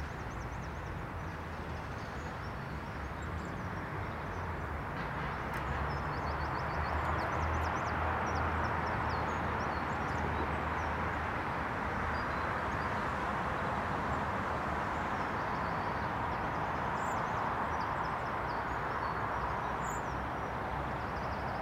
Contención Island Day 58 inner southeast - Walking to the sounds of Contención Island Day 58 Wednesday March 3rd
The Drive High Street Little Moor Highbury
A secret pond
an island bench
tucked behind a hazel coppice
Bee hives
quiet in the chill morning
Against the traffic
the birds keep in contact
but little song
England, United Kingdom